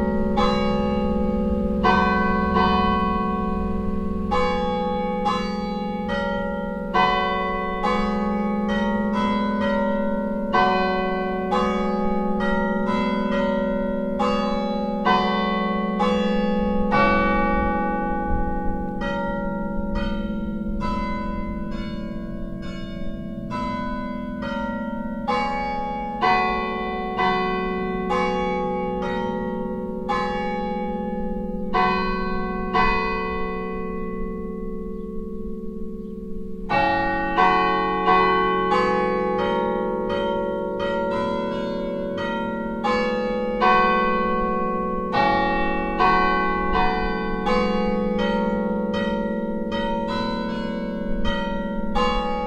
pintsch, church, bells
At the St. Maximilin Church of Pintsch.
The 3oclock bells on a hot, mellow windy summer day.
Pintsch, Kirche, Glocken
Bei der St. Maximilian-Kirche in Pintsch. Die 3-Uhr-Glocken an einem heißen, milden windigen Sommertag.
Pintsch, église, cloches
À l’église Saint-Maximin de Pintsch. Le carillon de 15h00 un doux soir d’été chaud mais venteux.
Kiischpelt, Luxembourg, 11 July 2011